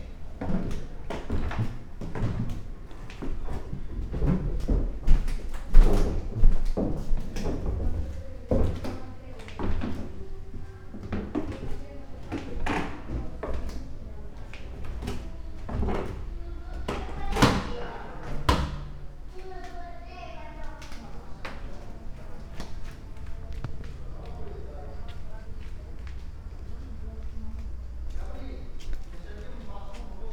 house, Ul. Vladimira Švalbe, Rovinj, Croatia - wooden stairs
12 July 2014